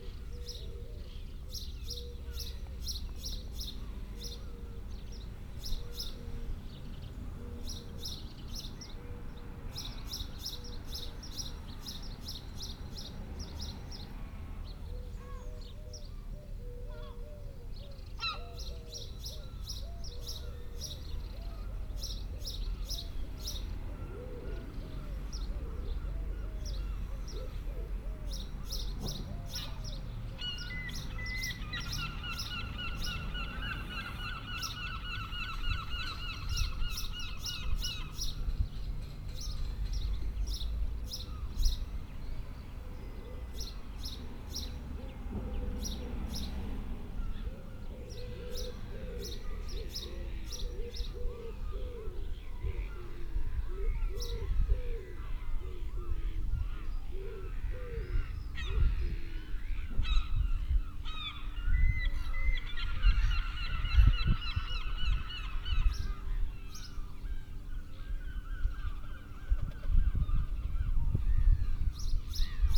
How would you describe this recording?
Sitting on the quayside on World Listening Day watching the mist lift over the boats at Wells. Binaural recording best enjoyed on headphones.